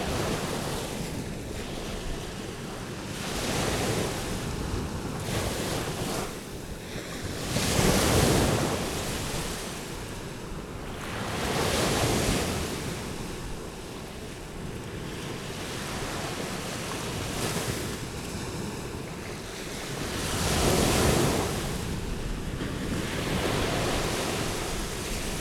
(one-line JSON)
{"title": "Unnamed Road, Gdańsk, Polska - Mewia Łacha", "date": "2018-06-18 12:47:00", "description": "This sounds were recirding during the soundwalks organised during the project: Ucho w wodzie.( Ear in the water.) This is a place of nature reserve, where normally walks are forbidden, but walked there with guardes who watched out the birds nests.", "latitude": "54.35", "longitude": "18.94", "altitude": "4", "timezone": "GMT+1"}